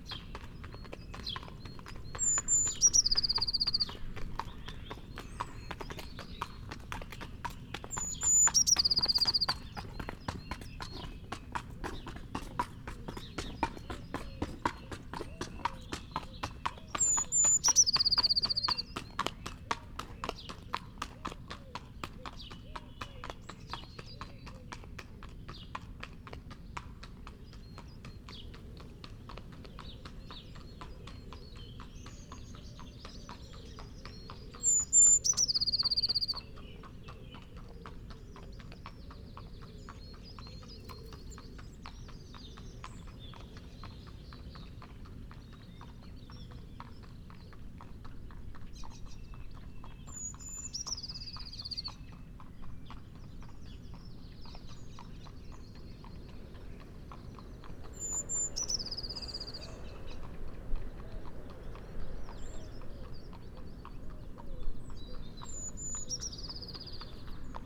Unnamed Road, Malton, UK - singing blue tit ... passing horse ...
singing blue tit ... passing horse ... dpa 4060s in parabolic to mixpre3 ... not edited ... background noise ... bird calls ... song ... wren ... song thrush ... pheasant ... coal tit ... blackbird ... robin ... collared dove ...